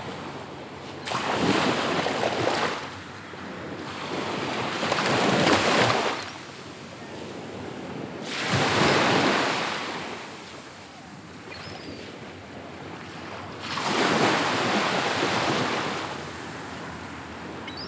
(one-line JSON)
{"title": "S W Coast Path, Swanage, UK - Swanage Beach Walking Meditation", "date": "2017-08-24 08:15:00", "description": "A walking meditation along the seashore, back and forth between the groynes on this stretch of Swanage beach. Recorded on a Tascam DR-05 using the on-board coincident pair of microphones.", "latitude": "50.61", "longitude": "-1.96", "altitude": "5", "timezone": "Europe/London"}